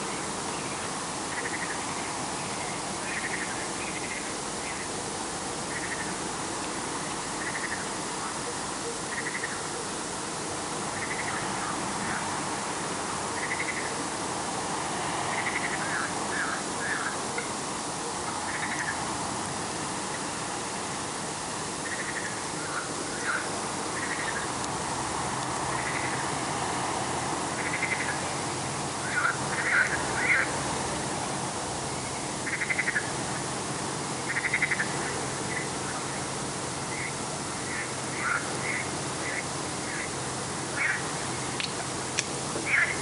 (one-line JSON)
{"title": "Orange Grove by Çıralı Mezarlığı, Turkey - Portakal Ağacı Korusu", "date": "2018-12-21 23:36:00", "description": "Recorded with a Sound Devices MixPre-3 and a pair of DPA4060s", "latitude": "36.41", "longitude": "30.47", "altitude": "1", "timezone": "Europe/Istanbul"}